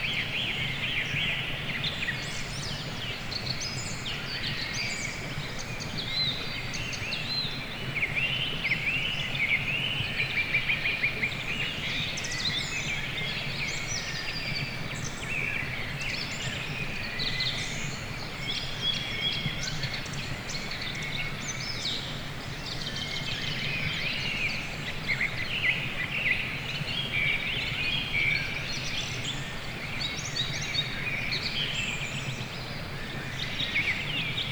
Dawn chorus at Trsteník valley in National Park Muránska Planina.
Banskobystrický kraj, Stredné Slovensko, Slovensko, 12 May 2019, 03:00